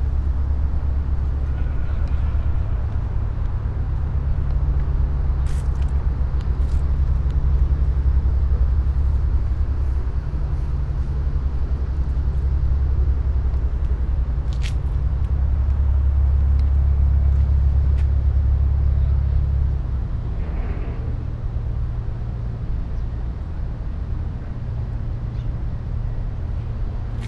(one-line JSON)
{"title": "river ship Haimatland, islands tail, Mitte, Berlin, Germany - land, water", "date": "2015-09-02 14:01:00", "description": "spoken words, wind, streets and river traffic, swifts close to the water surface\nSonopoetic paths Berlin", "latitude": "52.51", "longitude": "13.41", "altitude": "32", "timezone": "Europe/Berlin"}